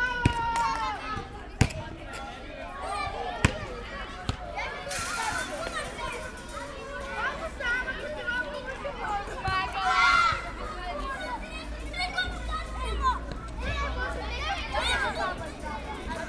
Streliška ulica, Ljubljana - kids on school yard
kids playing on school yard at Streliška ulica.
(Sony PCM-D50, DPA4060)
Ljubljana, Slovenia, 7 November 2012